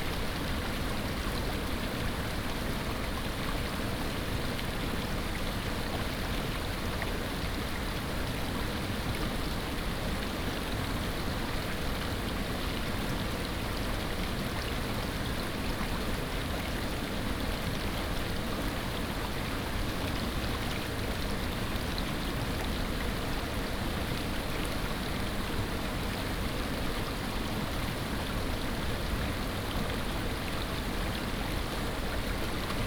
種瓜坑溪, 成功里, Puli Township - Flow
Stream sound, Flow
Nantou County, Taiwan, 19 April 2016